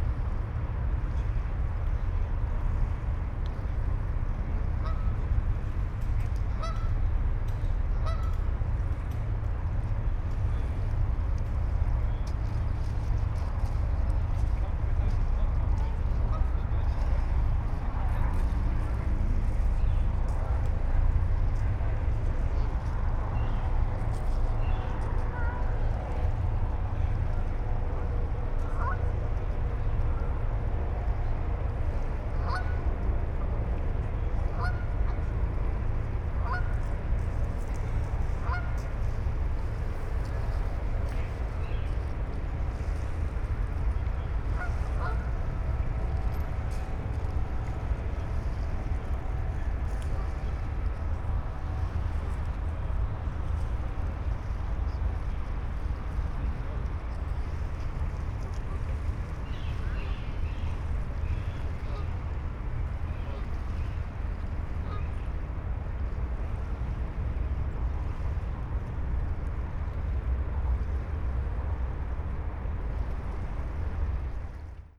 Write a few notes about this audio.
Köln Deutz, Rheinpark, evening ambience, ship and traffic drone, a flock of geese, (Sony PCM D50, Primo EM172)